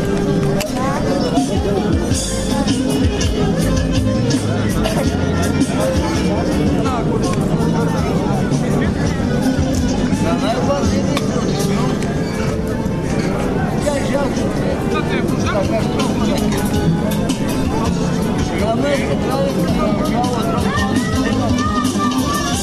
Sofia, Bitaka Flea Market - Bitaka II
Sofia, Bulgaria, November 2011